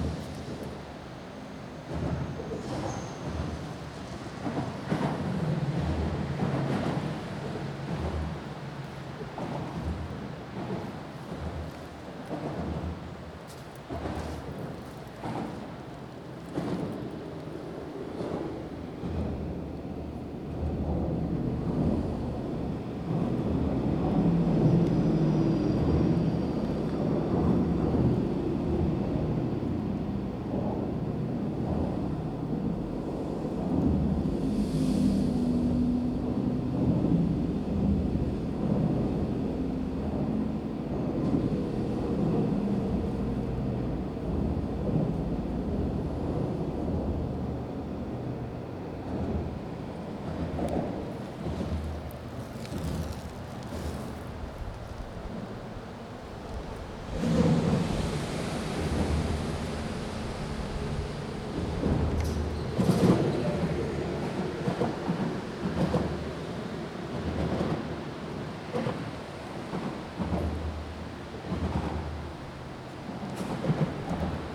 Walk along Sulphur Beach reserve at low tide